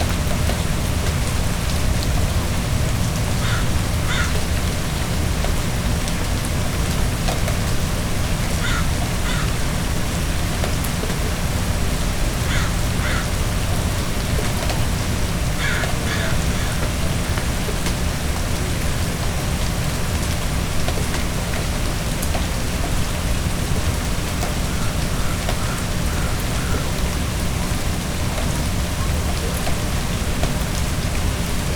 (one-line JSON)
{"title": "West Point Grey Academy, Vancouver, BC, Canada - Rivière atmosphérique", "date": "2022-01-12 11:58:00", "description": "Une pluie constante consume les dernières traces de glace, résidu d'un Noël anormalement blanc.", "latitude": "49.27", "longitude": "-123.20", "altitude": "59", "timezone": "America/Vancouver"}